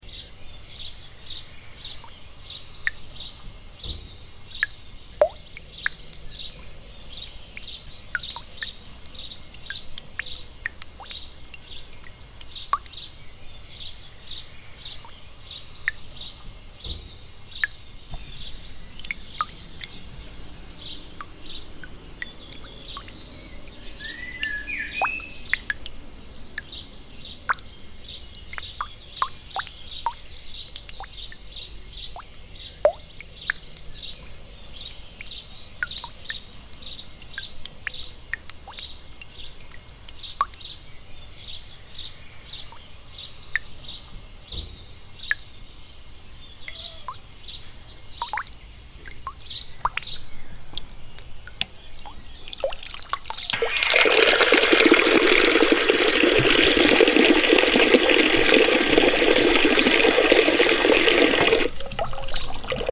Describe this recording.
water drops coming from a public tap, birds, ambience of the small street. (Binaural: Dpa4060 into Shure FP24 into Sony PCM-D100)